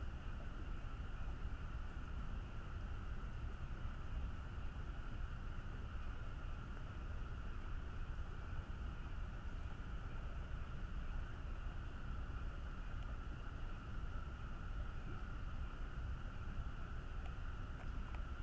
far frogs
愛知 豊田 frog